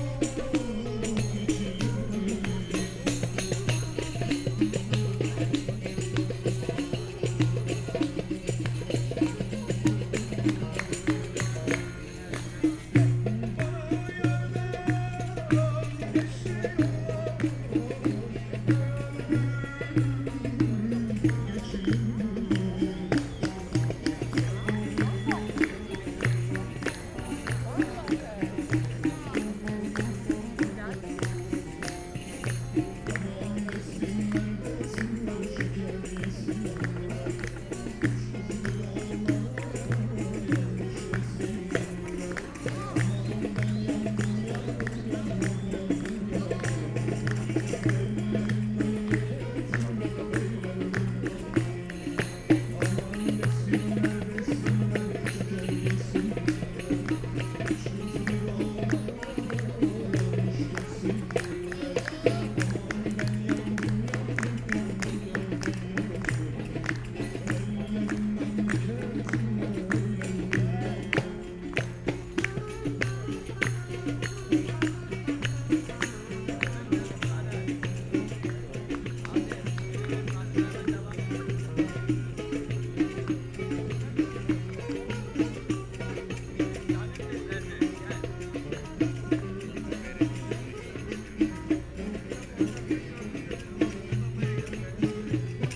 Volkspark Hasenheide, Berlin - musicians gathering
musicians gathering in Hasenheide park, sunny late summer weekend afternoon
(SD702, DPA4060)